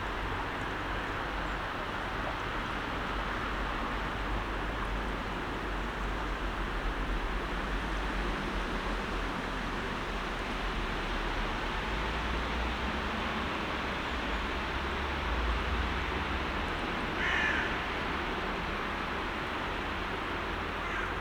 parking lot nearby the wupper river
the city, the country & me: november 27, 2013